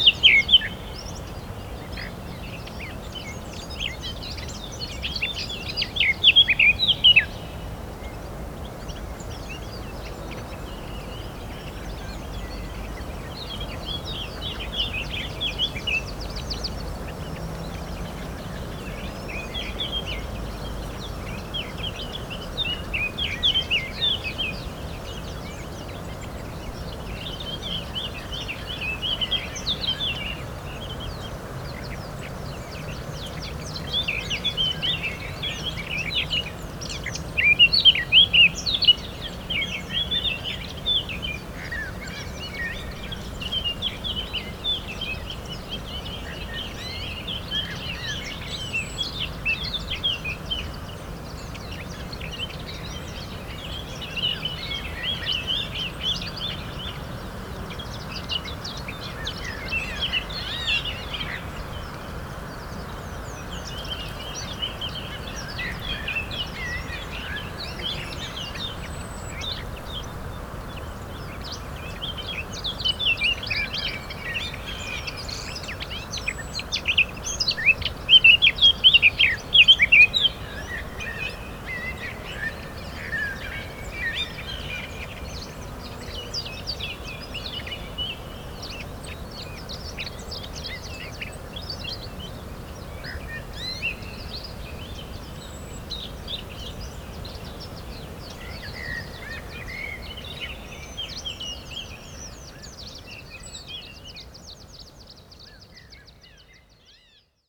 Güímar, Santa Cruz de Tenerife, España - Chamoco
Birds and wind in Barranco de Badajoz (Chamoco) with Sound Devices 702 with rode NT55 binaural.
España, European Union